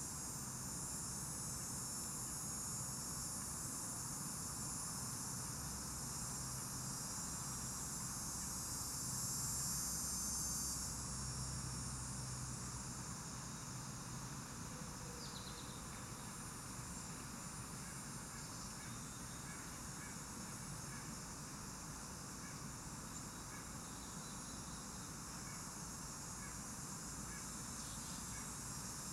Voie Camillien-Houde, Montréal, QC, Canada - Forest, cicadas and birds
Mont Royal park, Zoom MH-6 and Nw-410 Stereo XY